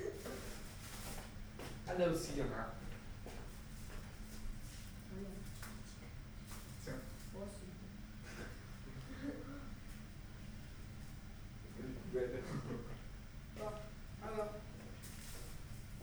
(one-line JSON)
{"title": "ECSP, Cornimont, France - 3minutes 50secondes de préadolescence", "date": "2012-10-20 19:00:00", "description": "Projet ado : Dans la cuisine ils préparent le repas de la soirée ado.\nDans le cadre de l’appel à projet culturel du Parc naturel régional des Ballons des Vosges “Mon village et l’artiste”", "latitude": "47.96", "longitude": "6.84", "altitude": "514", "timezone": "Europe/Paris"}